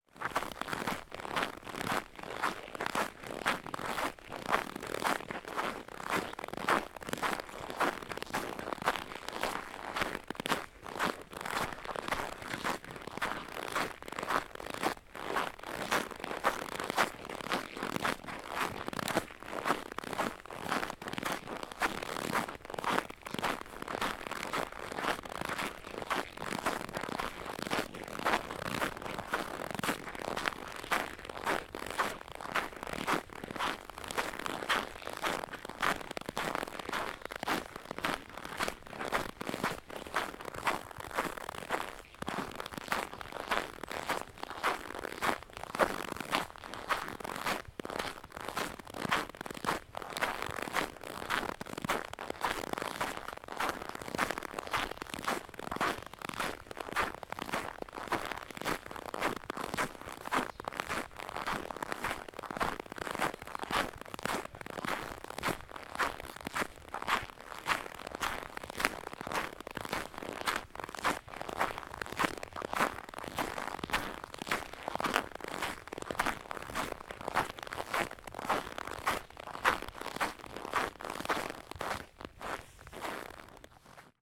ул. Лесная, Сергиев Посад, Московская обл., Россия - Winter walk
Several people walking on the winter path in the forest. It's frosty, temperature is about -19C. Nice shiny day.
Recorded with Zoom H2n (MS mode).
17 January 2021, 12:25